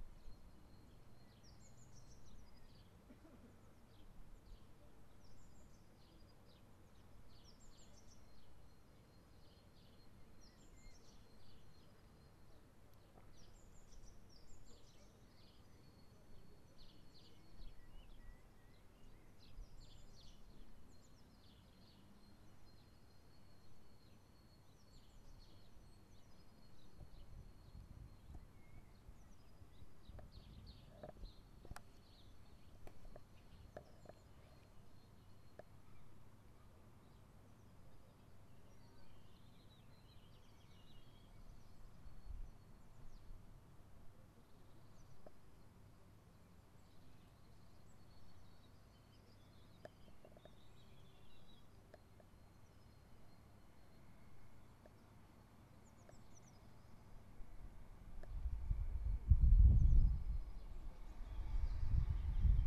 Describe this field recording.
shot from the window of my stay at a barren hostel, interpolating machine sounds & birds calls going wild in the afternoon.